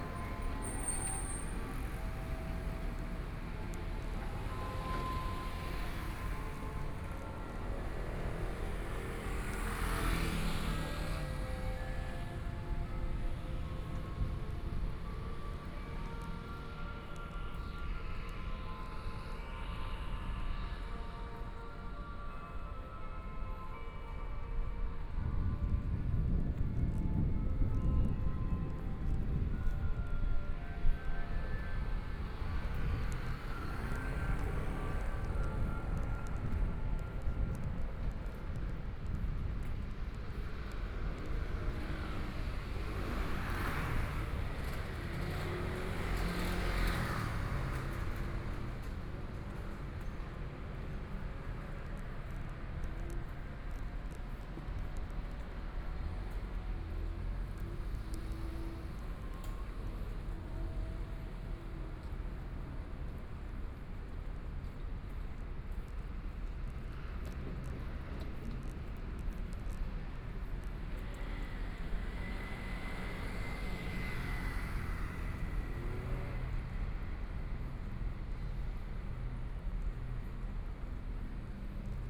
Guangming Rd., Luzhou - walking in the Street
Rainy days, walking in the Street, Binaural recordings, Zoom H6+ Soundman OKM II